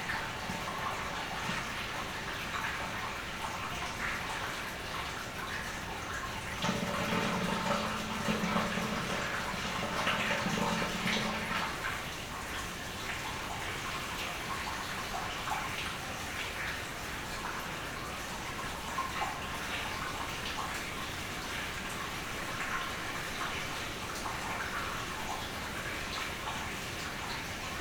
Beselich Niedertiefenbach, Grabenstr. - water in drain
place revisited, winter night, quiet village. this sound is one of the oldest i remember. or more precise, a few meters away, the sound of a beautiful little creek disappearing in a drain pipe.
her miserable body wastes away, wakeful with sorrows; leanness shrivels up her skin, and all her lovely features melt, as if dissolved upon the wafting winds—nothing remains except her bones and voice - her voice continues, in the wilderness; her bones have turned to stone. She lies concealed in the wild woods, nor is she ever seen on lonely mountain range; for, though we hear her calling in the hills, 'tis but a voice, a voice that lives, that lives among the hills.